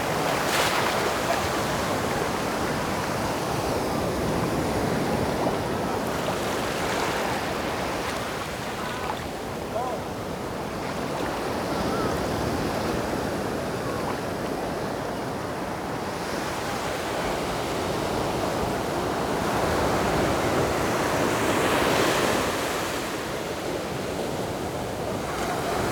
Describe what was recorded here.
Sound of the waves, In the beach, Hot weather, Zoom H6 MS+ Rode NT4